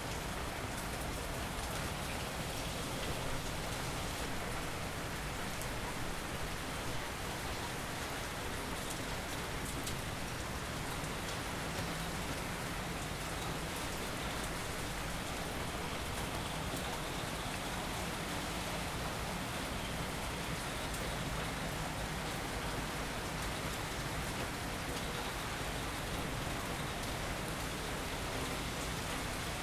São João, Portugal - Rainstorm Lisbon
Rainstorm in Lisbon.
Sounds of Rain, thunders and airplanes.
ZoomH4n